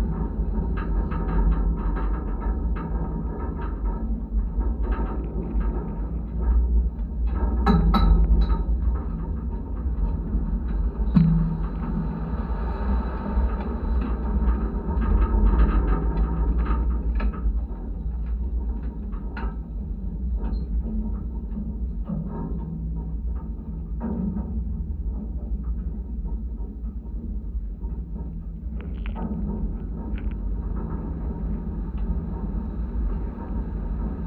Faidherbe Bridge, Saint Louis, Senegal - Contact Mics on Faidherbe Bridge
Stereo contact mics on hand-railing of Faidherbe Bridge in Saint-Louis, Senegal. Contact mics by Jez Riley French, recorded with Zoom H4 recorder.